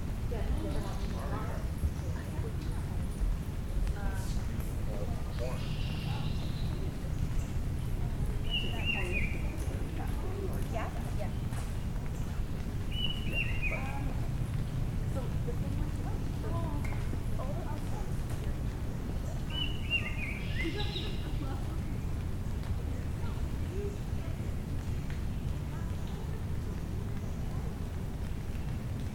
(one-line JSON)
{"title": "Glen Cedar Bridge - Glen Cedar Bridge after rain", "date": "2020-05-28 20:00:00", "description": "Recorded (with Zoom H5) on the Glen Cedar pedestrian bridge. Some rain can be heard falling from the trees.", "latitude": "43.69", "longitude": "-79.43", "altitude": "162", "timezone": "America/Toronto"}